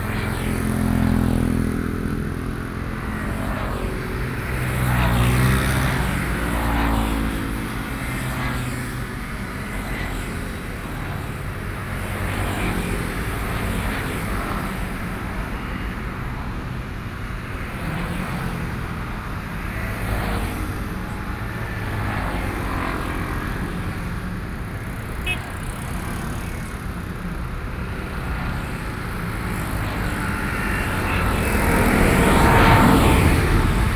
Zhongshan S. Rd., Taipei City - Mix
Place the music and traffic noise, Sony PCM D50 + Soundman OKM II